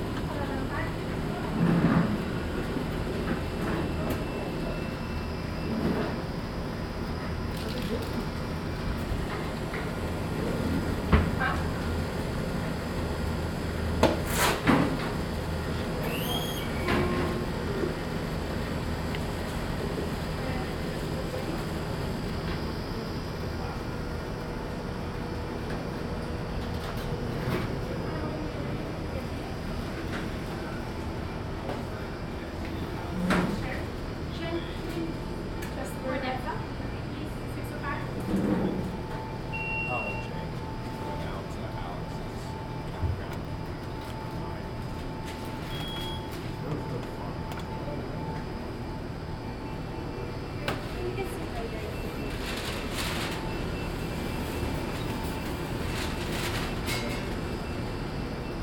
A&W, Calgary, AB, Canada - A&W